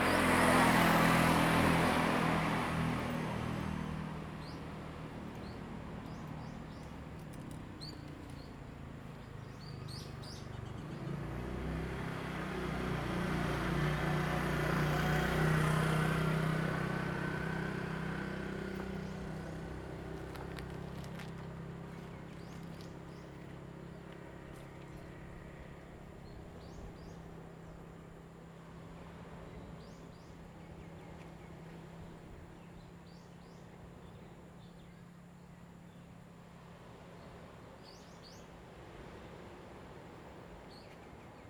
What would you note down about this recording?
Birds singing, Traffic Sound, Zoom H2n MS +XY